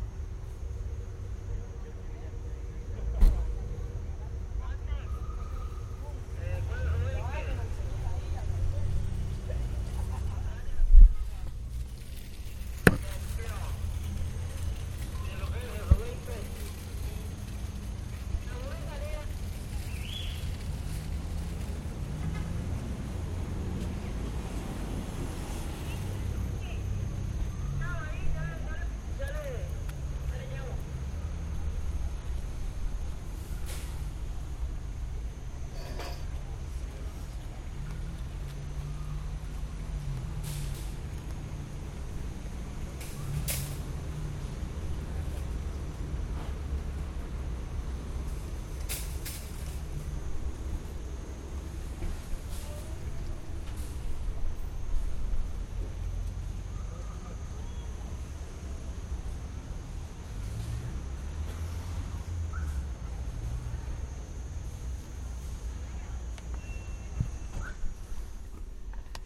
San Sebastián, San José, Costa Rica - Adelante, doña Elizabeth (Antiguo Hipermás)
A taxi in the parking lot, waiting for instructions